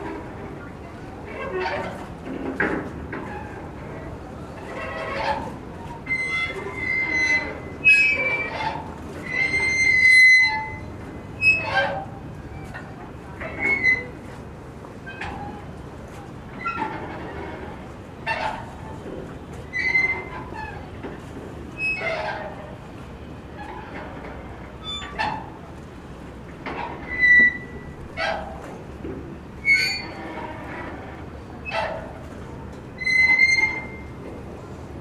The last ferryboat of the day has vehicled the passengers from work back up the bosphorus, leaving the pontoon alone, floating on black water. the wind is harsh and cold, the winter is near, and so the pontoon sings...
18 October 2010